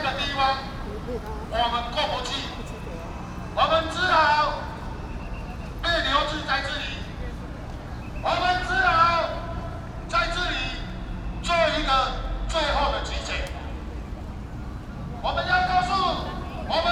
Control Yuan, Taipei - labor protests
labor protests, Sony PCM D50 + Soundman OKM II